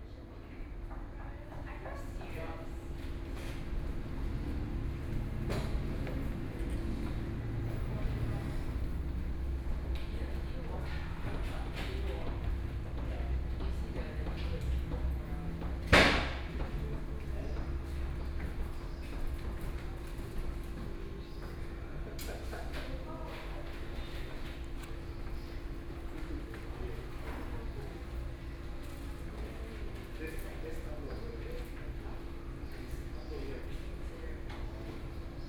Museum of Contemporary Art, Taipei - Museum lobby
in the Museum lobby, Wood flooring, Sony PCM D50 + Soundman OKM II
Taipei City, Taiwan